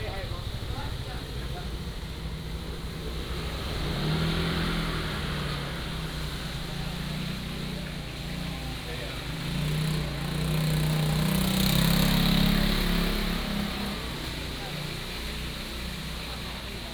Juguang Rd., Jincheng Township - Traditional alley
Traditional alley, Traffic Sound